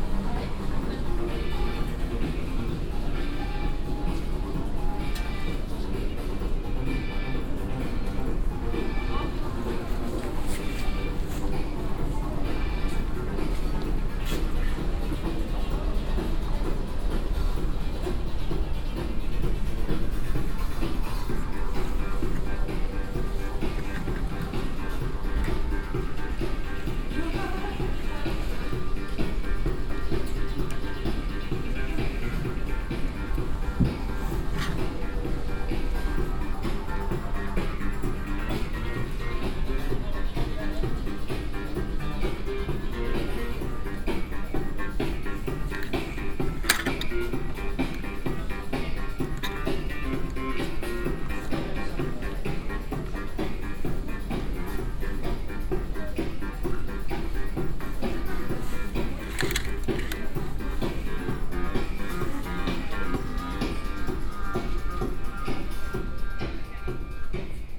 essen, kettwiger street, cloth store
In einem Warenhaus für Kleidung. Der Klang der Rolltreppe beim Betreten der Abteilung. Herumgehen begleitet von Warenhaus Musik.
Inside a cloth store going into the department using the moving staircase, walking around accompanied by store music.
Projekt - Stadtklang//: Hörorte - topographic field recordings and social ambiences
Essen, Germany, 8 June 2011, 23:31